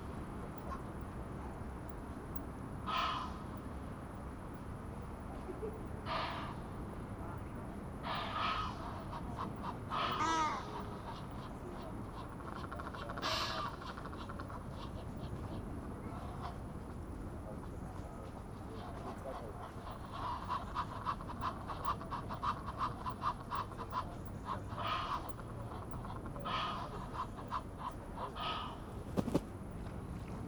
Köln, Stadtwald, Kahnweiher - Egyptian geese / Nilgänse
a group of Egyptian geese getting excited about the recordist, complaining from the distance
(Sony PCM D50)
1 August 2019, 21:45